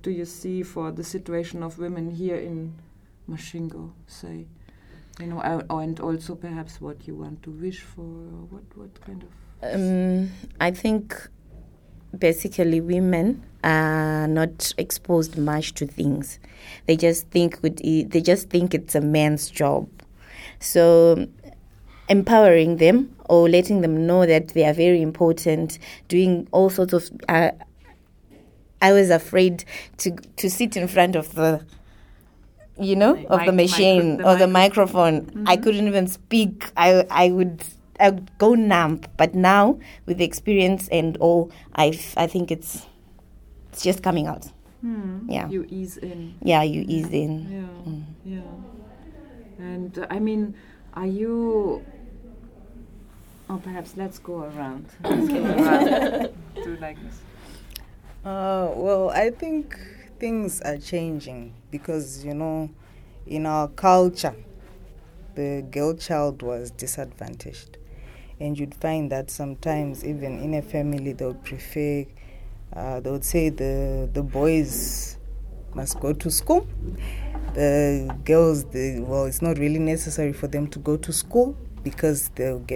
Radio Wezhira, Masvingo, Zimbabwe - Radio Wezhira sistaz...
Here, they talk about their experiences as women journalists in and for their local community and how they generally see the situation of women in their society…
The entire recordings are archived at:
October 2012